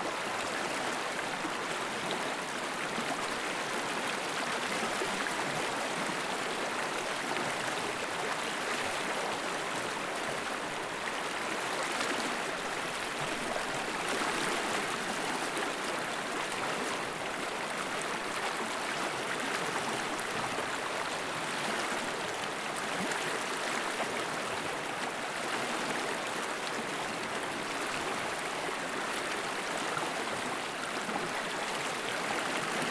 Môlay, France - River sounds
Sounds of the water movement, Serein river, Môlay, France.
Recorded with a Zoom H4n
Bourgogne-Franche-Comté, France métropolitaine, France, 25 December 2020